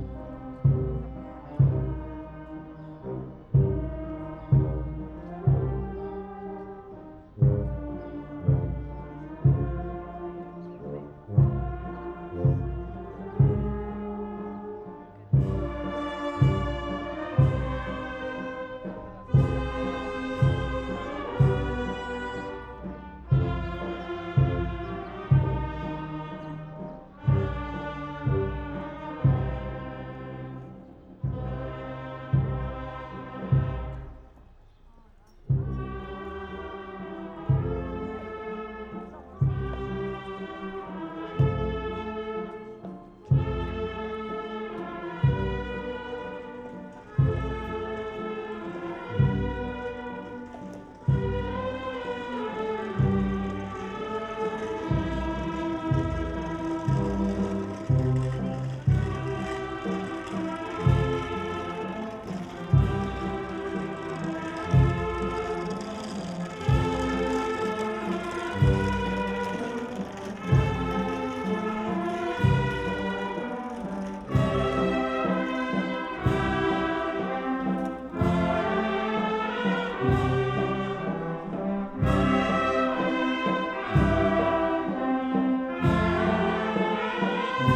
Dingli, Malta - passion play, procession, marching band
Dingli, Malta, marching band playing during a passion play procession.
(SD702, AT BP4025)